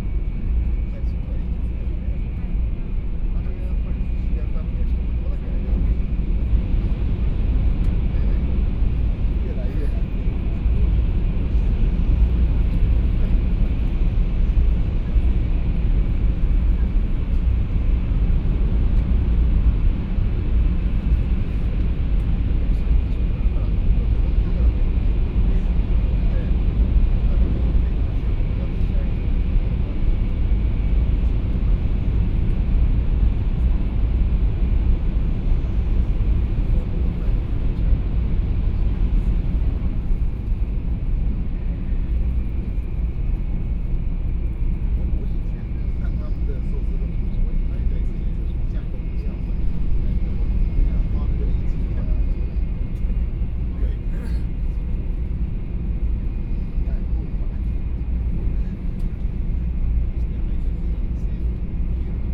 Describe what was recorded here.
High - speed railway, In the compartment